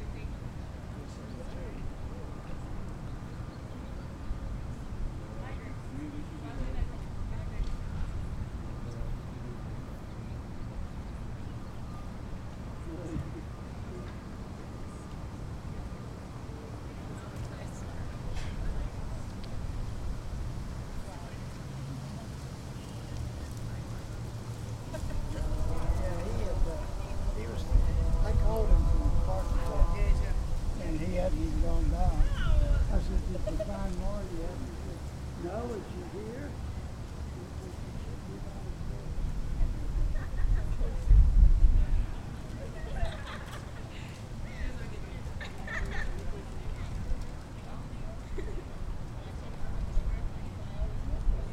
{"title": "Appalachian State University, Boone, NC, USA - Audio Documentary Sense of Place", "date": "2015-09-23 12:10:00", "description": "Sanford Mall sense of place recording", "latitude": "36.21", "longitude": "-81.68", "altitude": "984", "timezone": "America/New_York"}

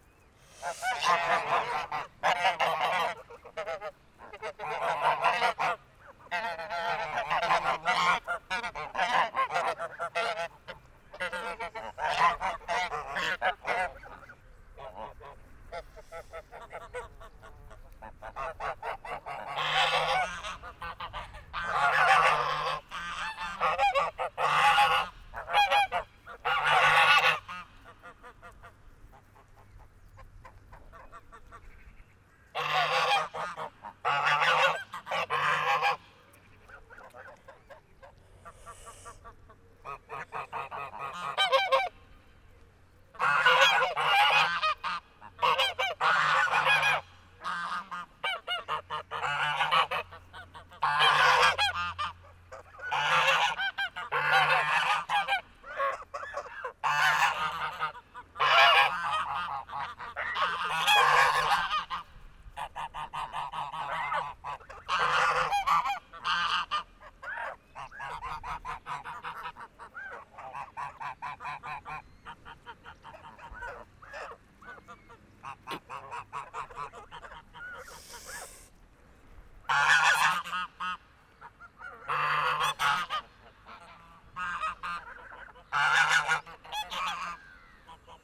{"title": "1348 Ottignies-Louvain-la-Neuve - Angry geese", "date": "2017-07-15 08:27:00", "description": "During the time I pick up beer capsules for my neighboor, who is collecting this kind of objects, a huge herd of geese is coming to see me. The birds are very angry : fshhhhhh they said !", "latitude": "50.67", "longitude": "4.61", "altitude": "107", "timezone": "Europe/Brussels"}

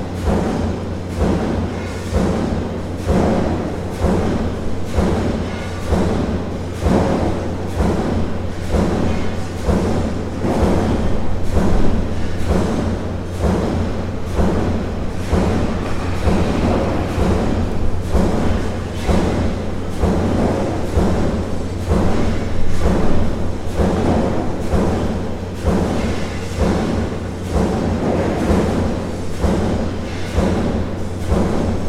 {"title": "Hibikimachi, Wakamatsu Ward, Kitakyushu, Fukuoka, Japan - Meiji Steel Works", "date": "2019-05-01 21:18:00", "description": "Steel press in action - recorded from outside the factory through an open window.", "latitude": "33.94", "longitude": "130.83", "timezone": "Asia/Tokyo"}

